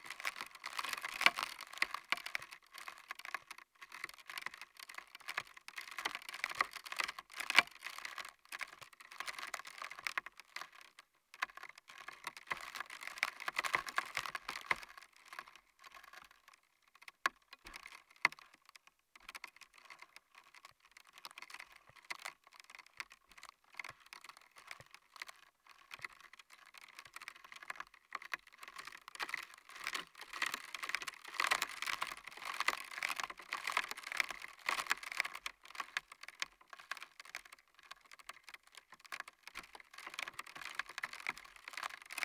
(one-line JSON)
{"title": "Lithuania, Utena, on the first ice", "date": "2011-12-28 15:30:00", "description": "The first ice on the shore of the lake. Its about 1-2 milimetres thin and acts as good membrane. Ive placed contact microphones on it to record subtle movements in water.", "latitude": "55.52", "longitude": "25.63", "altitude": "127", "timezone": "Europe/Vilnius"}